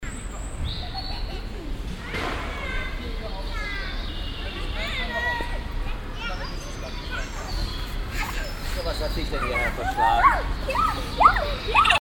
cologne, bruesseler platz, begegnung
soundmap: köln/ nrw
erste original aufnahme vom platz, nachmittags vor dem kinderspielplatz
project: social ambiences/ listen to the people - in & outdoor nearfield recordings